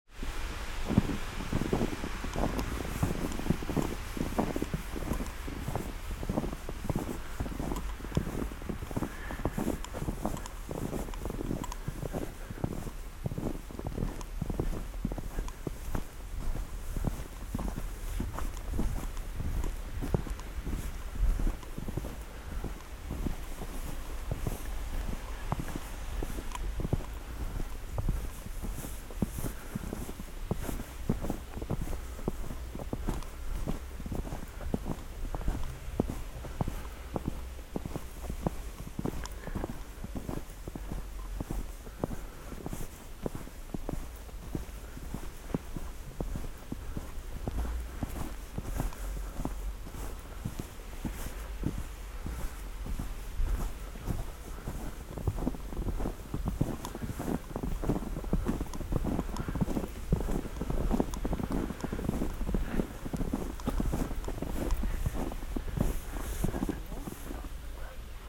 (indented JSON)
{
  "title": "Fuggerstraße, Augsburg, Deutschland - winter speaks königsplatz augsburg",
  "date": "2021-01-17 20:40:00",
  "description": "This recording is from Sunday evening, January 17, shortly before 9 p.m., the closing time of the lockdown crossing the Königsplatz in Augsburg in dense snowfall and about 5-10 cm of wet snow on the ground.",
  "latitude": "48.37",
  "longitude": "10.89",
  "altitude": "494",
  "timezone": "Europe/Berlin"
}